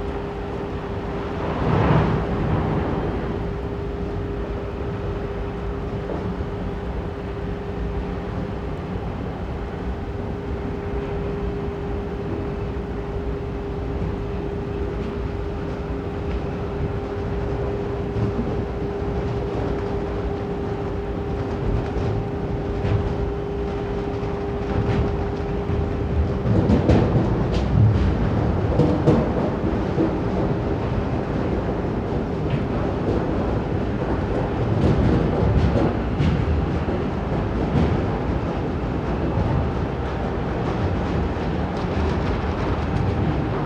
Grevenbroich, Germany - Coal train loading from an overhead gantry
These coal trains run on especially built railways that link the Garzweiler brown coal mine to the power stations nearby. It's an impressively integrated system in a relatively small region that has been totally directed towards electricity production under the control of the energy giant RWE AG.
1 November 2012